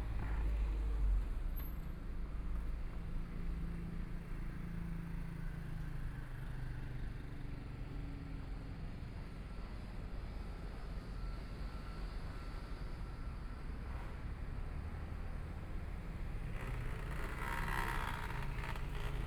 中山區成功里, Taipei City - walking on the Road
walking on the Road, Traffic Sound
Binaural recordings
Zoom H4n+ Soundman OKM II
Taipei City, Taiwan, 16 February 2014